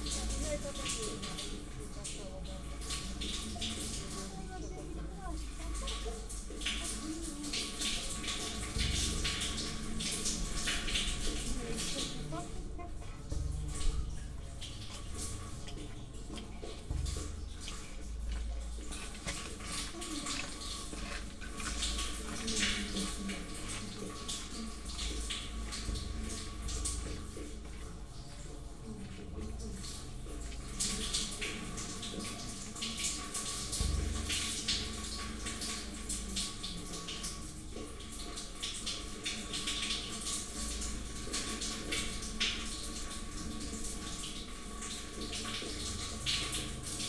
Molėtai, Lithuania, in the drainage
small mics placed in the drainage well on the street